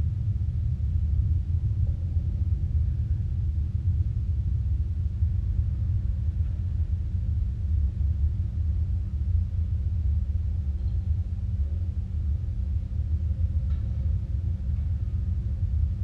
rooftop wires, Riga
sounds of long wires stretched across rooftops. recorded with contact mics
Latvia, 2 August 2010